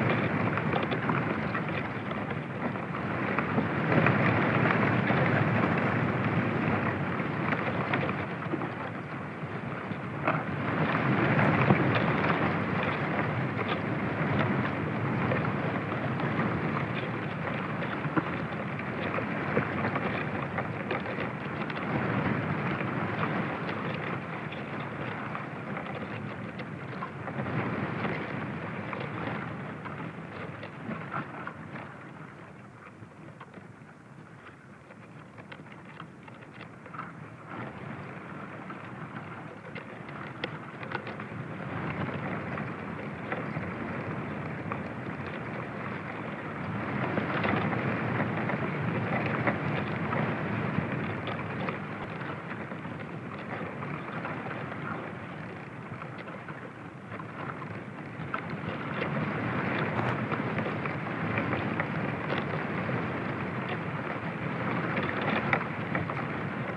Urbanização Vila de Alva, Cantanhede, Portugal - The trunk of an Olive tree on a windy day
Contact mic placed on the trunk of an Olive tree during a windy day.